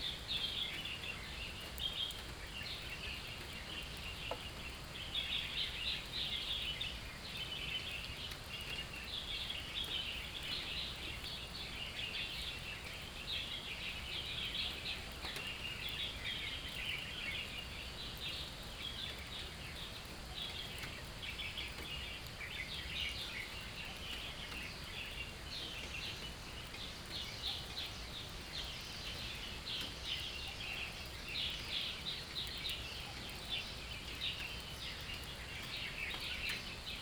成功橋, 埔里鎮成功里, Puli Township - Under the banyan tree
Under the banyan tree, Birds call, Rain drops, Traffic Sound